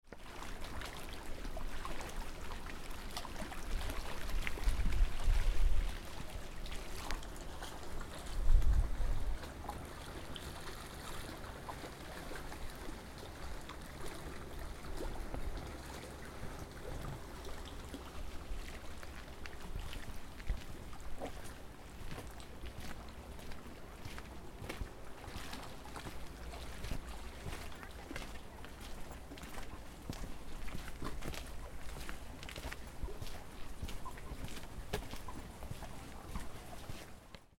Ufergeräusche Lago die Poschiavo
Uferwellen, Lago di Poschiavo, Puschlav, Südbünden
19 July 2011, ~17:00